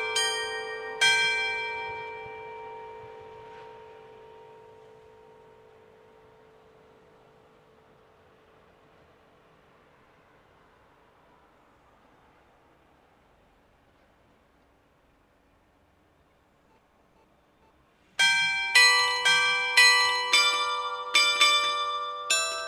Rue du Président Poincaré, Quesnoy-sur-Deûle, France - Le-Quesnoy-sur-Deûle - carillon de l'hôtel de ville
Le-Quesnoy-sur-Deûle (Nord)
Carillon de l'hôtel de ville
Ritournelles automatisées
France métropolitaine, France, 14 June 2020